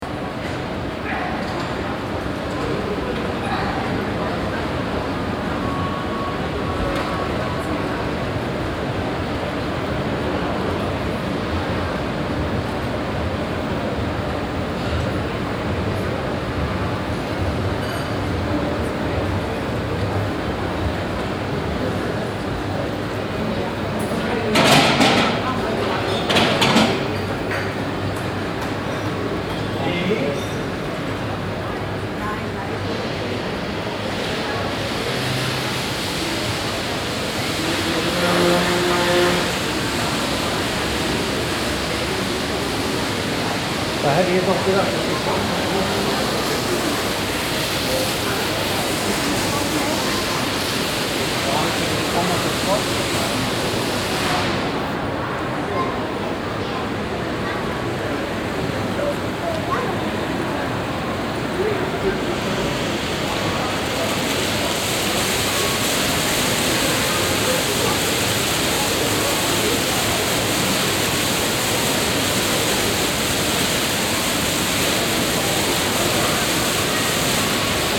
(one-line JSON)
{"title": "Stadtkern, Essen, Deutschland - essen, shopping mall, fountain", "date": "2014-04-04 13:50:00", "description": "Inside the shopping mall Limbecker Platz. The sound of people, the rolling staircase and a fountain that is located in the centre of the architecture.\nIm Einkaufszentrum Limbecker Platz. Der Klang von Menschen, Rolltreppen und einer Wasserfontäne aus einem Brunnen inmitten der Architektur.\nProjekt - Stadtklang//: Hörorte - topographic field recordings and social ambiences", "latitude": "51.46", "longitude": "7.01", "altitude": "77", "timezone": "Europe/Berlin"}